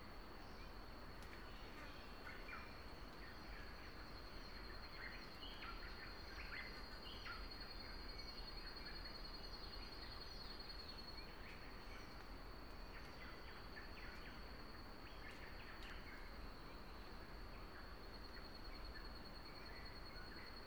{"title": "Waterfall track, Abaca, Fidschi - Forest in Fiji in the morning", "date": "2012-06-05 09:10:00", "description": "Soundscape of a remnant of rainforest east of the village of Abaca. The dummy head microphone facing south. Around 3 min in the recording a Giant Forest Honeyeater (Gymnomyza brunneirostris) can be heard calling. One of Fijis endemic birds. Recorded with a Sound Devices 702 field recorder and a modified Crown - SASS setup incorporating two Sennheiser mkh 20 microphones.", "latitude": "-17.67", "longitude": "177.55", "altitude": "714", "timezone": "Pacific/Fiji"}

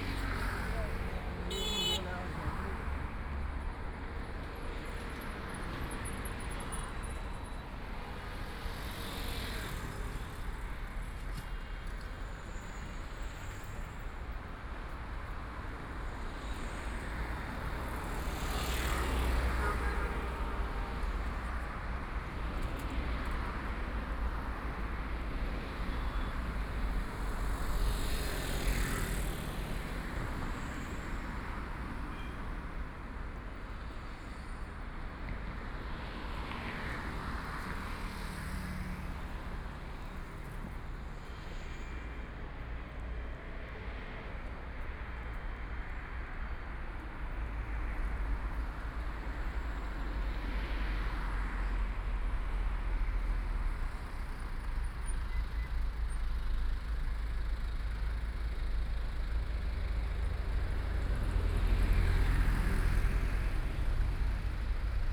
{"title": "國順東路, Yangpu District - walking in the Street", "date": "2013-11-22 17:20:00", "description": "Walking in the street, Traffic Sound, Binaural recording, Zoom H6+ Soundman OKM II", "latitude": "31.29", "longitude": "121.52", "altitude": "7", "timezone": "Asia/Shanghai"}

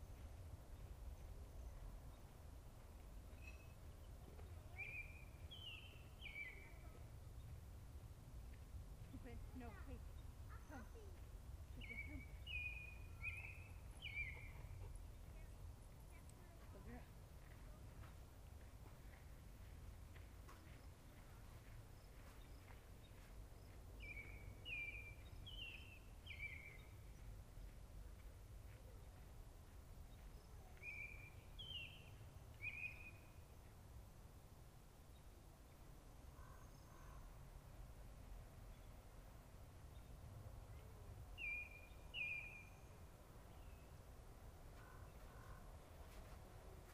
{"title": "Glorieta, NM, so called USA - GLORIETA summer evening 4", "date": "2018-06-28 19:44:00", "description": "more evening birds...almost time to take the gods for a walk...", "latitude": "35.57", "longitude": "-105.76", "altitude": "2264", "timezone": "America/Denver"}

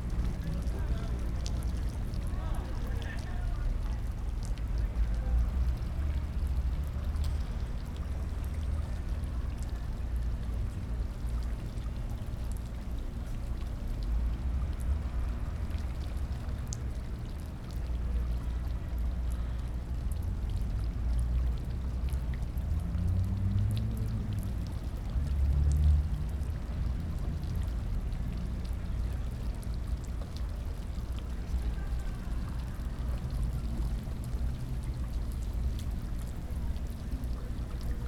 {"title": "Church bells at noon, Jackson Square, New Orleans, Louisiana - Bells at Noon", "date": "2012-09-05 11:57:00", "description": "*Best with headphones* : Sounds that reached me while sitting at the fountain in front of St. Louis Cathedral; waiting for the bell to sound at noon. People talking about the heat, and other obvious things. Music filtering in from around the French Quarter.\nChurch Audio CA14(quasi binaural) > Tascam DR100 MK2", "latitude": "29.96", "longitude": "-90.06", "altitude": "9", "timezone": "America/Chicago"}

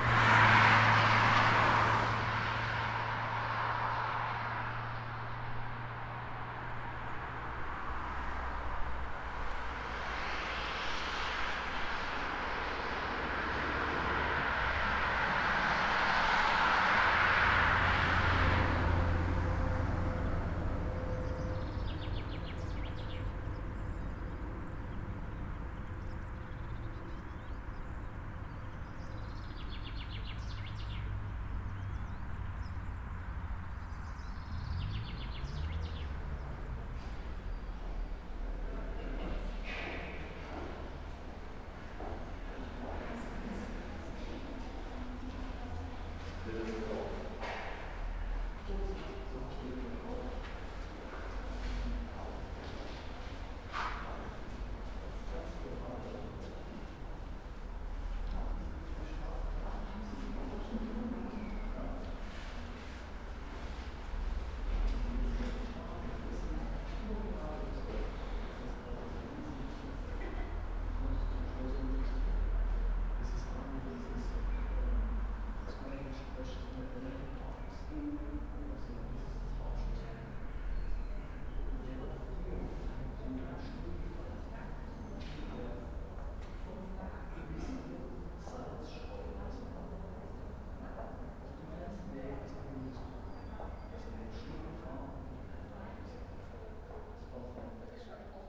{"title": "Солитьюд, Штутгарт, Германия - Walking around Akademie Schloss Solitude", "date": "2015-03-13 11:00:00", "description": "The Akademie Schloss Solitude and neighborhood: forest, vehicles, castle visitors.\nRoland R-26. Early Spring.", "latitude": "48.78", "longitude": "9.08", "altitude": "492", "timezone": "Europe/Berlin"}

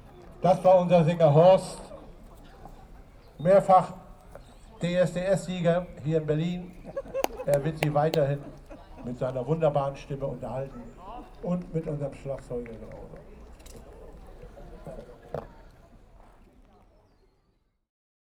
{"title": "Wilhelm-Kuhr-Straße, Berlin, Germany - Freier Bläser Chor Berlin: the brass bands easter concert", "date": "2019-04-20 14:01:00", "description": "The Freier Bläser Chor Berlin has been in existence since 1926 - the oldest brass band in Berlin. This 2pm concert, in the Bürgerpark Rosengarten Pavilion, took place in beautiful, warm, sunny spring weather.", "latitude": "52.57", "longitude": "13.39", "altitude": "41", "timezone": "Europe/Berlin"}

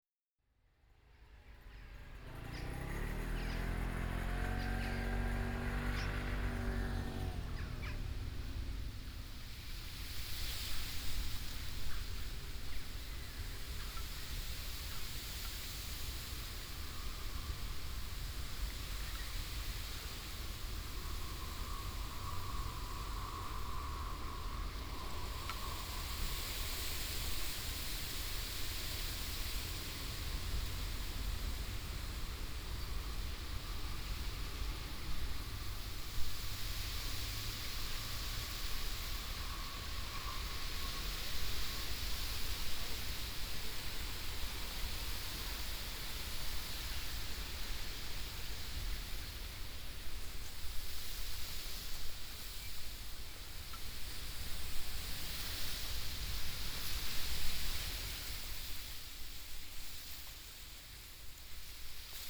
{"date": "2011-09-07 15:00:00", "description": "ruisend riet, trein op de achtergrond\nrustling sheer, train in background", "latitude": "52.15", "longitude": "4.45", "altitude": "1", "timezone": "Europe/Amsterdam"}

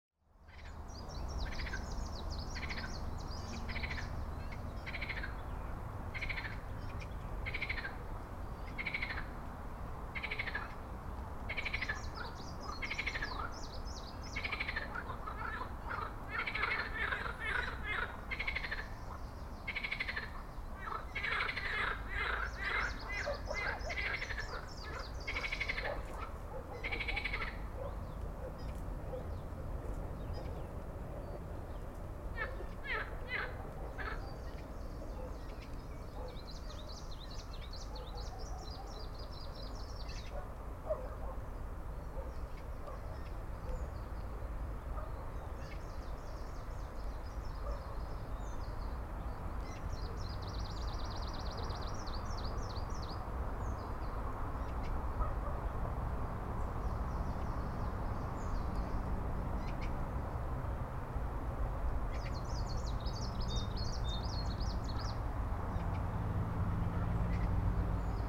{"title": "Барнаул, Алтайский край, Россия - Малаховские болота", "date": "2018-05-14 15:40:00", "description": "Marsh near Malakhov street, Barnaul. Frogs, birds, distant tram, ambient sounds.", "latitude": "53.37", "longitude": "83.71", "altitude": "188", "timezone": "Asia/Barnaul"}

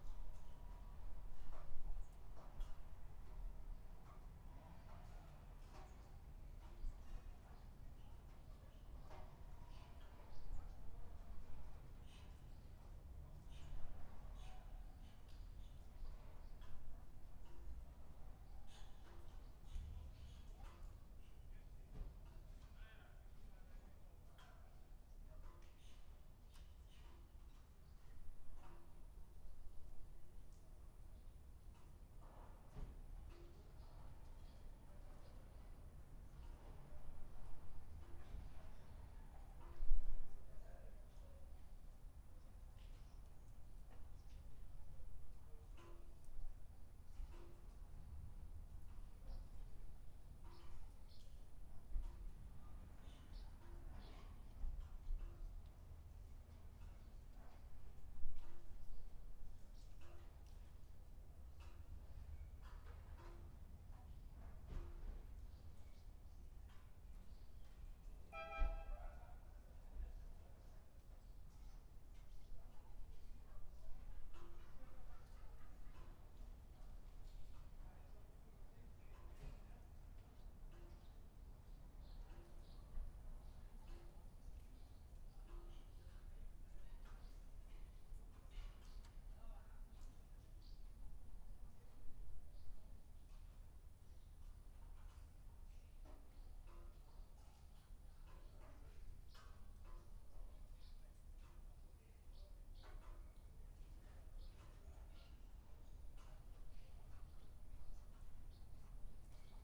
{"title": "Buzludzha, Bulgaria, inside - Buzludzha, Bulgaria", "date": "2019-07-16 13:18:00", "description": "Inside the monument of Buzludzha, a ruin of socialist architecture, the roof is incomplete, a lot of rubble lay around, swallows made their nests... the recording is rather quiet, the microphones stood on a remote place since the wind was quite heavy in this building on this peak of a mountain", "latitude": "42.74", "longitude": "25.39", "altitude": "1425", "timezone": "Europe/Sofia"}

